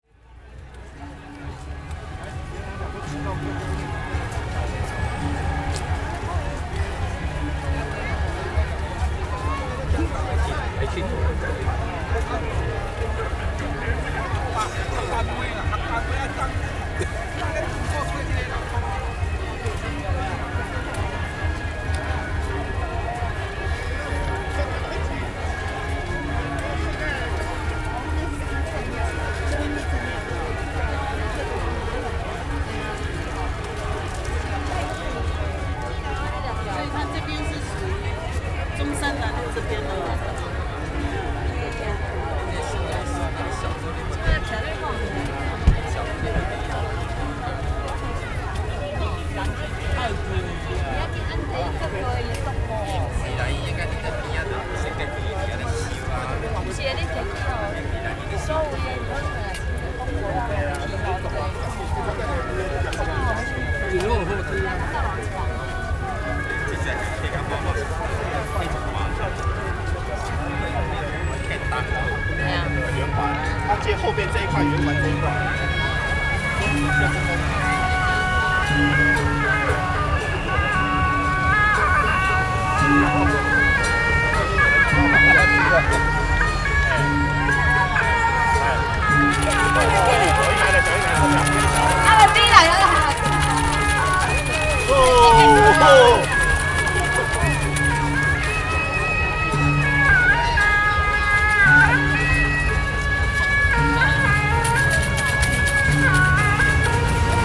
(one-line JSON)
{"title": "Ketagalan Boulevard, Taipei - Occasions on Election-related Activities", "date": "2011-12-10 10:10:00", "description": "Ketagalan Boulevard, Occasions on Election-related Activities, Rode NT4+Zoom H4n", "latitude": "25.04", "longitude": "121.52", "altitude": "13", "timezone": "Asia/Taipei"}